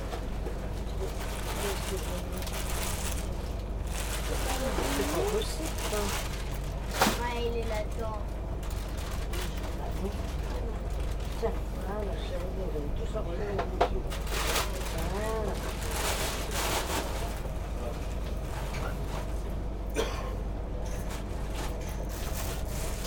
Taking the train from Paris to Le Havre. The neighbours are playing cards.